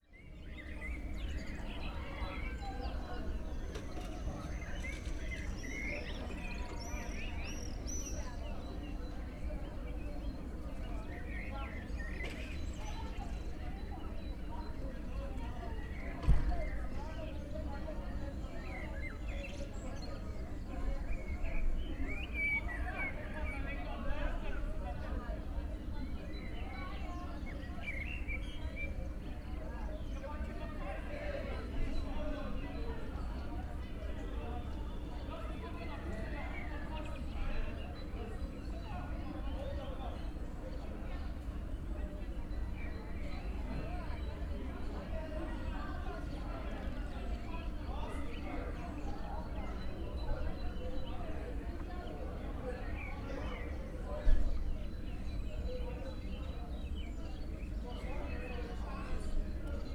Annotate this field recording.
classroom sounds in the school yard